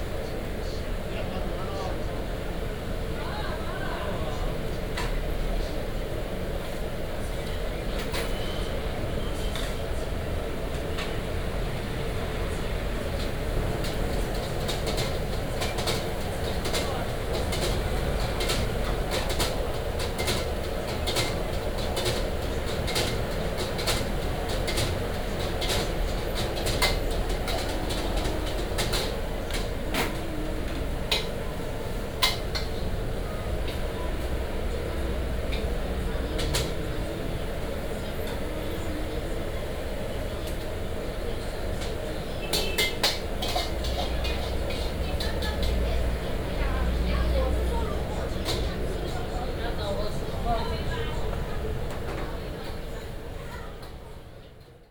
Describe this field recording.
in the Fried noodle shop, Traffic sound